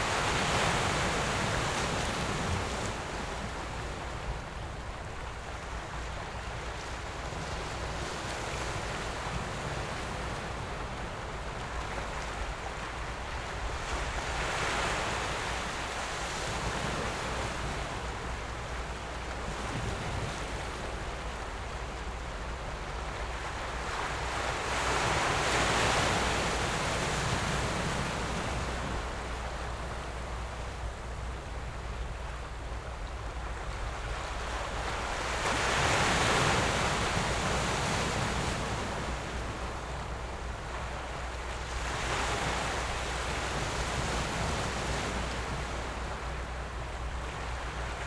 頭城鎮龜山里, Yilan County - Sitting on the rocks
Sitting on the rocks, Rocks and waves, Sound of the waves, Very hot weather
Zoom H6+ Rode NT4
Yilan County, Taiwan, 29 July